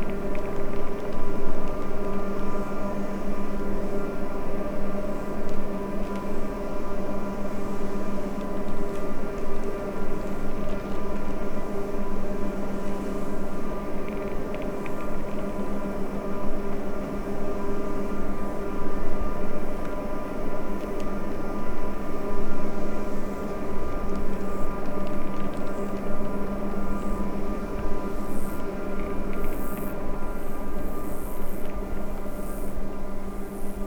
wind power plant rotor and machine, Portugal - wind power plant rotor and machine
shotgun, the creaking is coming from the moving rotor, then the machine starts and stops